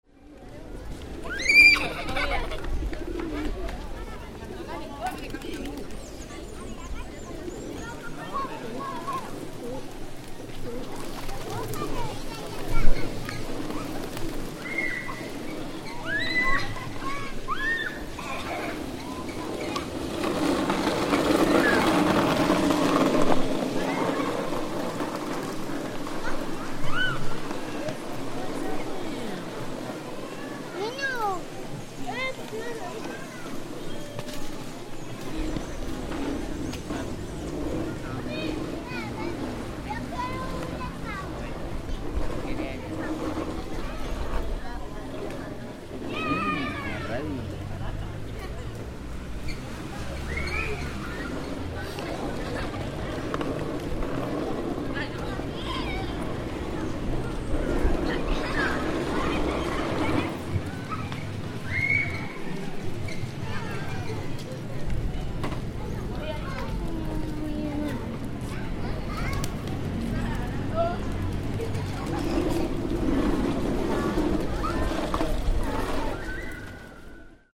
Socorro, Santander, Plaza Centrale
En esta pequeña ciudad se gestó la Revolución de los Comuneros de 1781. Alrededor de la estatua de Jose Antonio Galan los niños juegan.